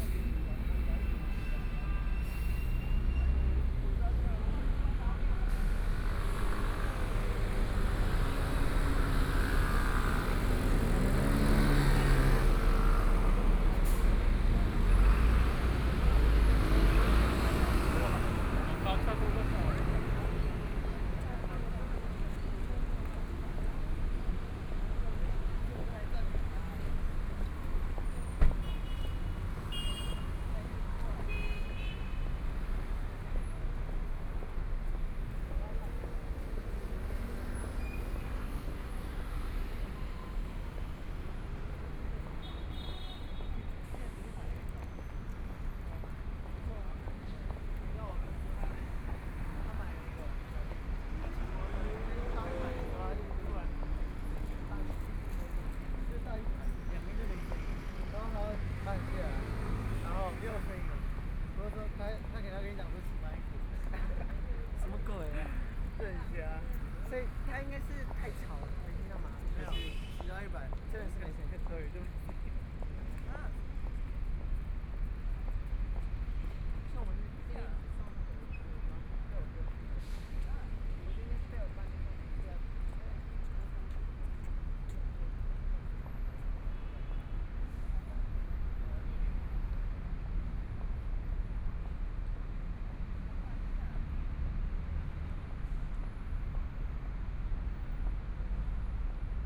Xinsheng N. Rd., Zhongshan Dist. - walking on the Road
walking on the Road, Traffic Sound
Please turn up the volume
Binaural recordings, Zoom H4n+ Soundman OKM II
Zhongshan District, Taipei City, Taiwan